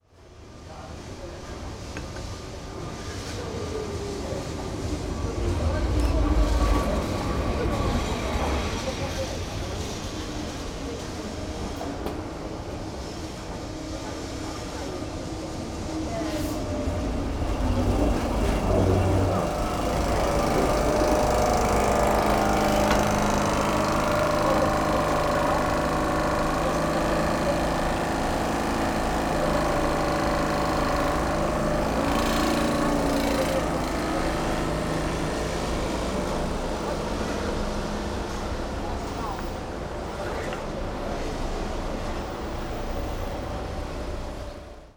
narrow street, train stops 1m from the table on the sidewalk, engine sound